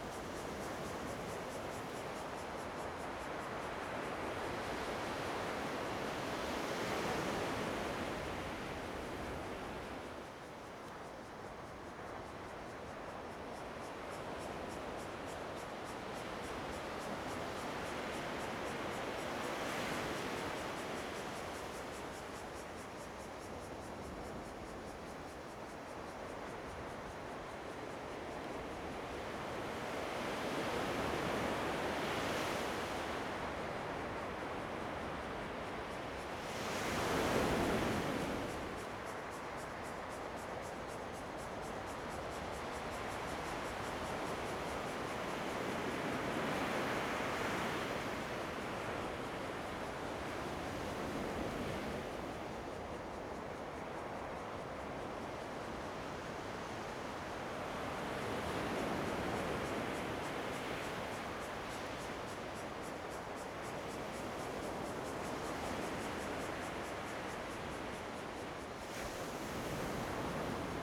長濱村, Changbin Township - Thunder and the waves
At the seaside, Sound of the waves, Thunder, Very hot weather
Zoom H2n MS+ XY
Taitung County, Taiwan, 8 September, ~13:00